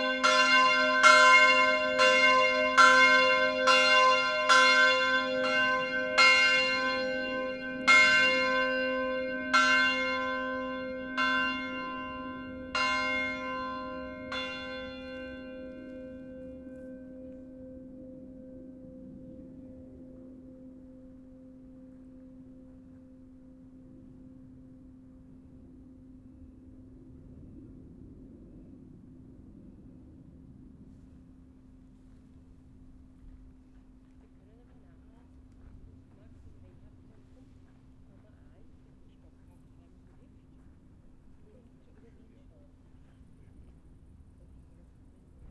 Baumgartner Höhe, Wien-Penzing, Österreich - Church bells of Steinhof
Bells of the church by Otto Wagner, Angelus at 7pm; recorded with XY-90° Zoom H6